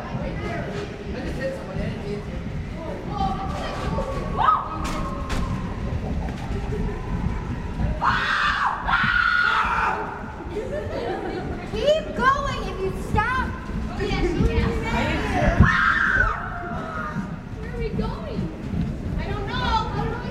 S.S. City of Milwaukee Carferry Museum, Arthur St., Manistee, MI, USA - Ghost Ship Audience (Car Deck)
Young teenagers pass through section of haunted attraction, held aboard retired railroad car ferry (built in 1931), now operating as a maritime museum. Recorded on the car deck, steel interior. Stereo mic (Audio-Technica, AT-822), recorded via Sony MD (MZ-NF810).